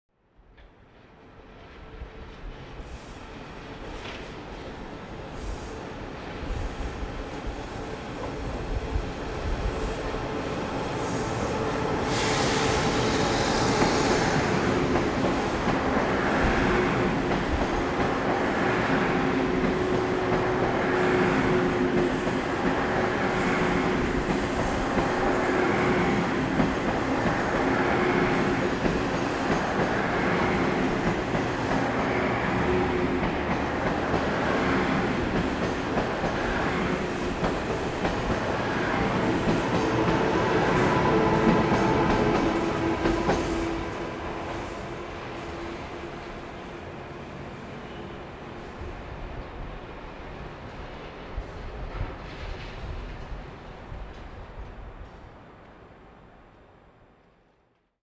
Train traveling through, Sony ECM-MS907, Sony Hi-MD MZ-RH1